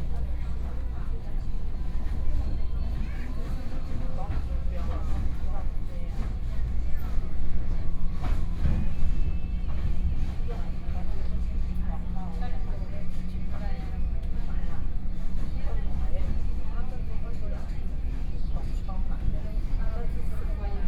Tanzi, Taichung - Local Train
Taichung Line, from Fengyuan Station to Taiyuan Station, Zoom H4n + Soundman OKM II